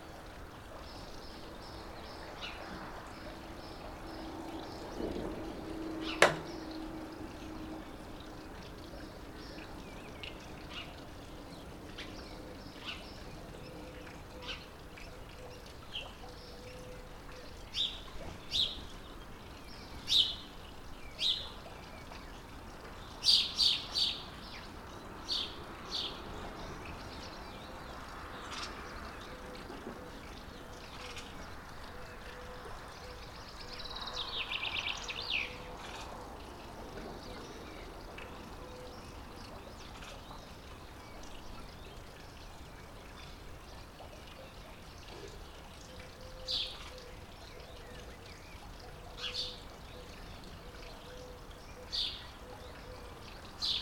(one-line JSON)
{"title": "Balkon, Mittergasse, Aich, Österreich - Balkonaufnahme morgens", "date": "2020-04-30 08:24:00", "description": "Ortsrandlage, Vögel, Wasser, Straße: B320, Solar-Wühltiervertreiber, H1n Zoom Handy Recorder, XY", "latitude": "47.42", "longitude": "13.82", "altitude": "703", "timezone": "Europe/Vienna"}